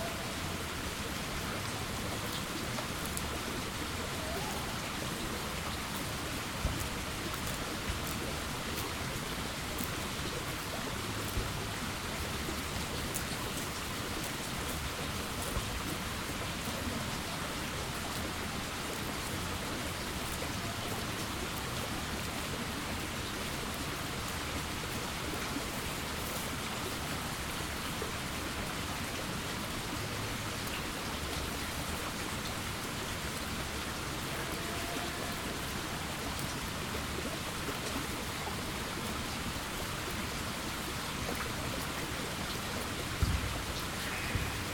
Bogotá, Colombia - Colegio campestre Jaime Garzón
At a rural school farm in the company of a few sheep and a tiny brook.
For better audio quality and other soundfield recordings visit
José Manuel Páez M.